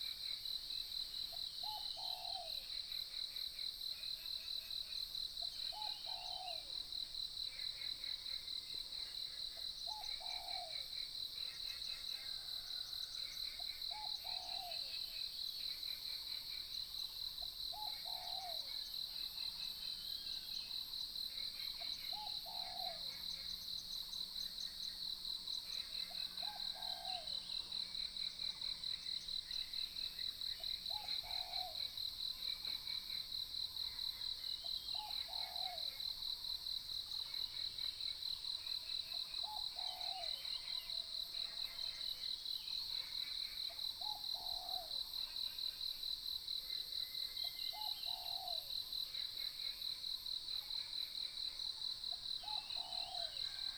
種瓜路, 埔里鎮桃米里 - Early morning
Early morning, Bird calls, Croak sounds, Insects sounds